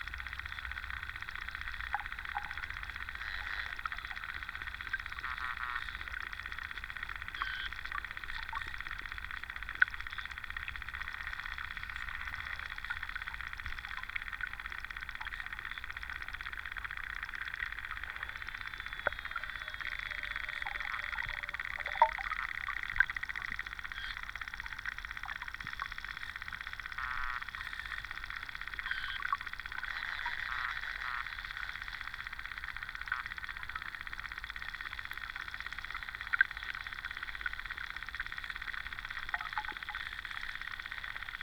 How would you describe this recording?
underwater recordings in city's park